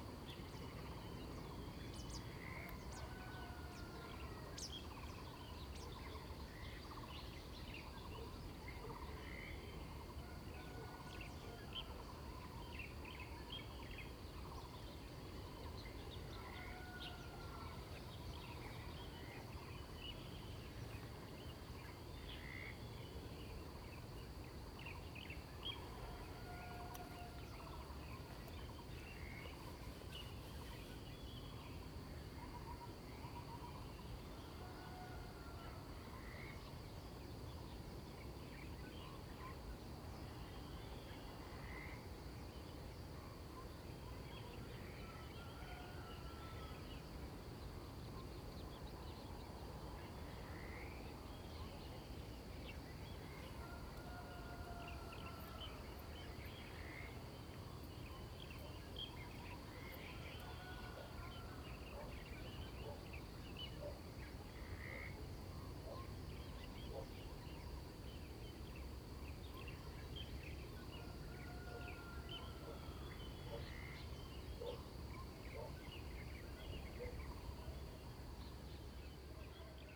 種瓜路, 桃米里 Puli Township - In the morning
Birds called, Chicken sounds
Zoom H2n MS+XY
6 May 2016, ~06:00